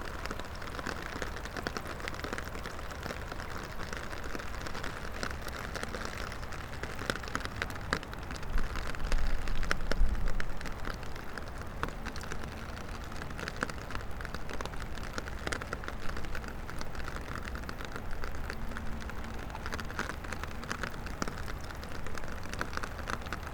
river Drava, Loka - playing with rain drops from umbrella onto surface of water